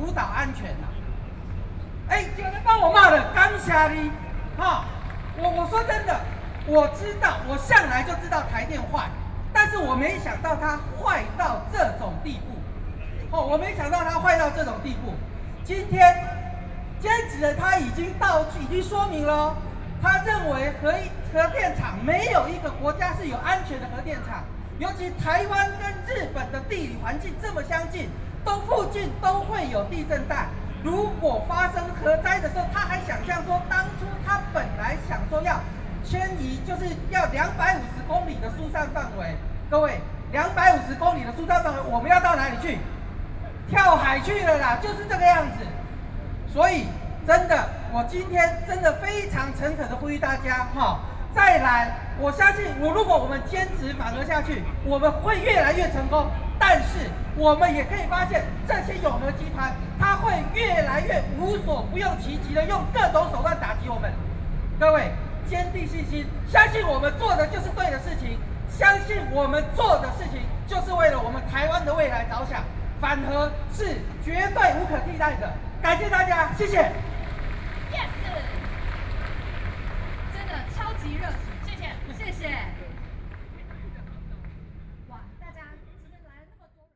Chiang Kai-Shek Memorial Hall, Taipei City - speech
anti–nuclear power, Zoom H4n + Soundman OKM II
Zhongzheng District, Taipei City, Taiwan